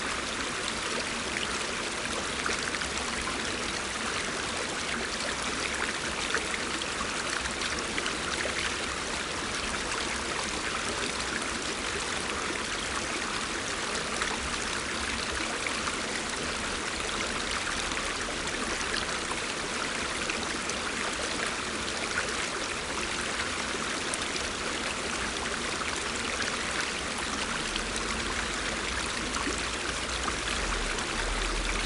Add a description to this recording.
Recorded with a pair of DPA 4060s and a Marantz PMD661